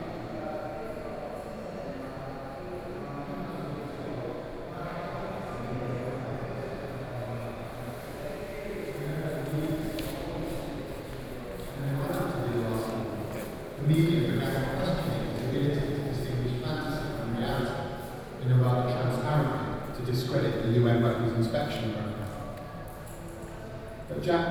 TAIPEI FINE ARTS MUSEUM - soundwalk
walking in the MUSEUM, Sony PCM D50 + Soundman OKM II, Best with Headphone( SoundMap20120929- 21)
信義區, 台北市 (Taipei City), 中華民國, 29 September 2012, 14:52